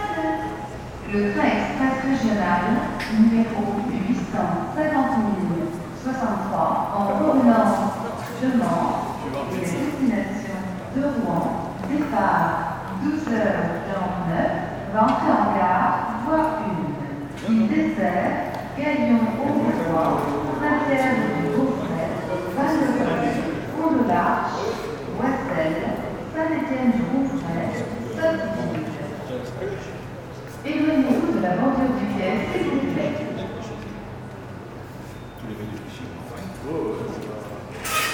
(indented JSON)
{
  "title": "Vernon, France - Vernon station",
  "date": "2016-09-22 12:53:00",
  "description": "Taking the train to Paris in the Vernon station. A first train to Mantes-La-Jolie arrives, and after the train to Paris Saint-Lazare arrives.",
  "latitude": "49.09",
  "longitude": "1.48",
  "altitude": "26",
  "timezone": "Europe/Paris"
}